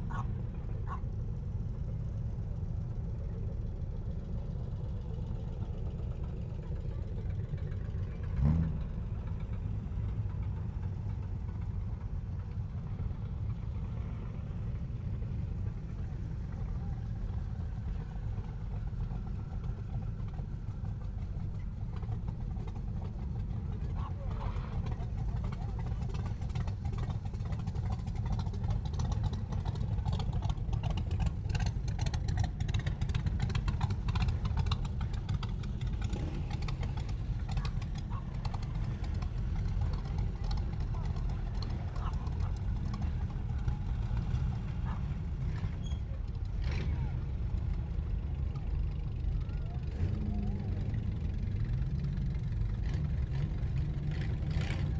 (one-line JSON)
{"title": "Bridlington, Park and Ride, Bridlington, UK - race the waves ... south prom ... bridlington ...", "date": "2022-06-18 10:54:00", "description": "race the waves ... south prom bridlington ... dpa 4060s clipped to bag to mixpre3 ... cars and bikes moving from car park holding to beach ...", "latitude": "54.07", "longitude": "-0.21", "altitude": "12", "timezone": "Europe/London"}